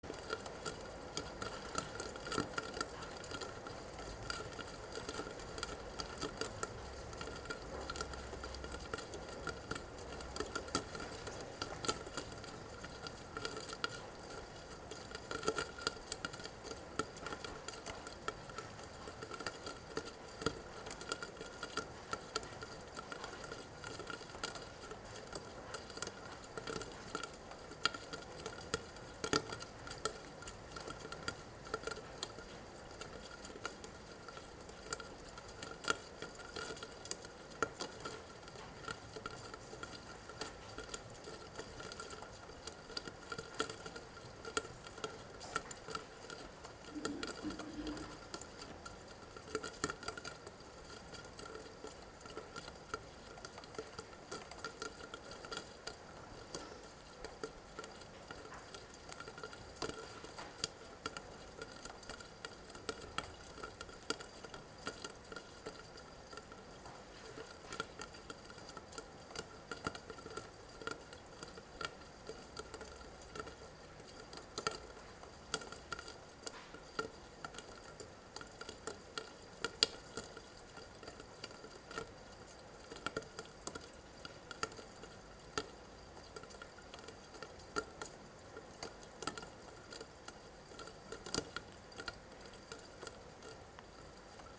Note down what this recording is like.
Rain droppings in the walled-in gutter as heard inside the house, close to the flor